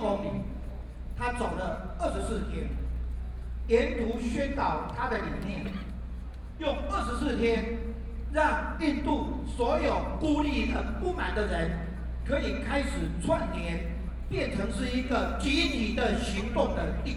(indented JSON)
{
  "title": "Ketagalan Boulevard, Zhongzheng District - Protest Speech",
  "date": "2013-08-18 18:20:00",
  "description": "Protest Speech, The assassination of the former president who is professor of speech, Sony PCM D50 + Soundman OKM II",
  "latitude": "25.04",
  "longitude": "121.52",
  "altitude": "8",
  "timezone": "Asia/Taipei"
}